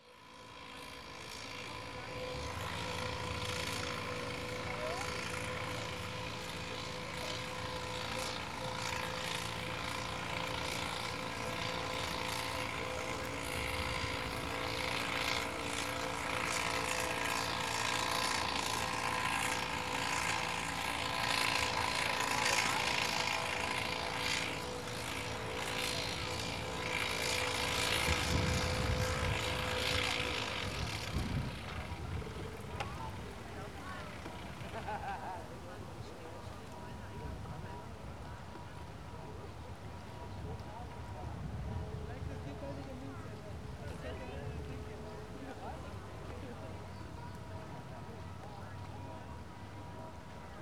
noon ambience at the canal, a makeshift boat drifting - running its engine occasionally to change position, tourist boat passes by, swans taking off flapping their feet in the water